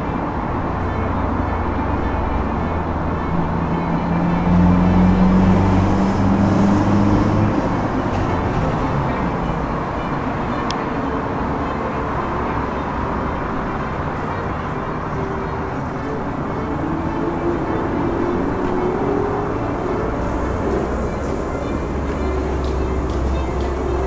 Toompuiestee, Tallinn, Estonia - Sümfoonia for an underpass